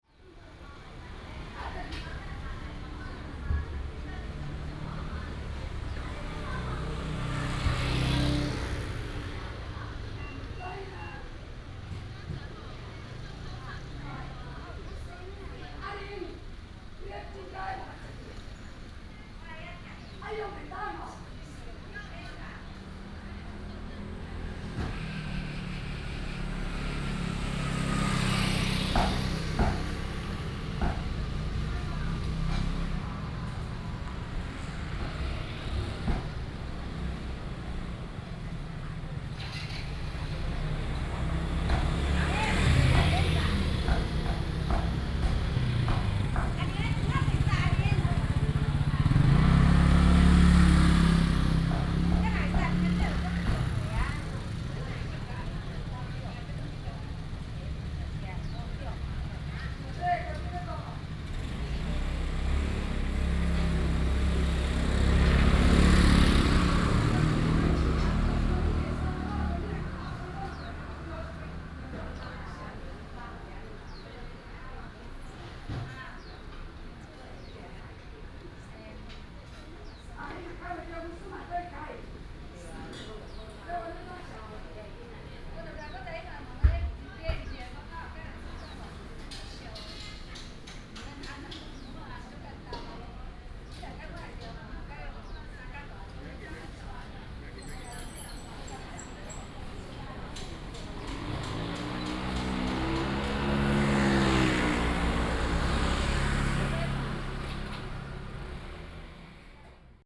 中山路614巷, Shengang Dist., Taichung City - In the alley
In the alley, in the market, Binaural recordings, Sony PCM D100+ Soundman OKM II